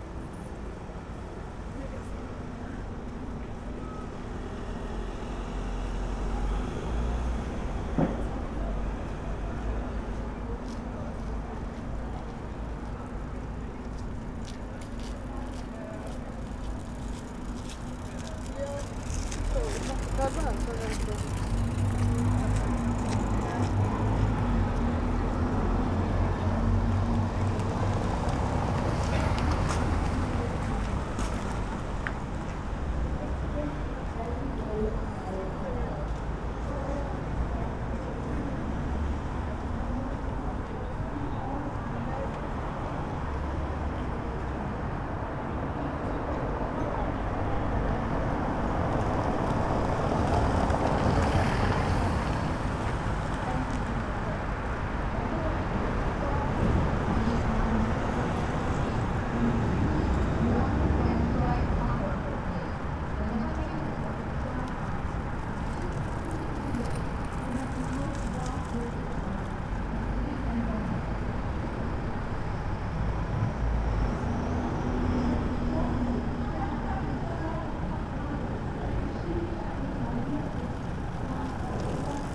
Frederiksholms Kanal, København, Denmark - Canal cyclists and pedestrians
Cyclists, pedestrians and cars passing in front of recorder. Busy side street with bus lane. One can hear voice of a guide from tourist boat
Bruits de cyclistes, piétons et voitures en face de l’enregistreur. Rue passante à gauche, avec une voie de bus. On peut entendre des commentaires en provenance d'un bateau de touriste à la fin de l’enregistrement
28 March, 16:16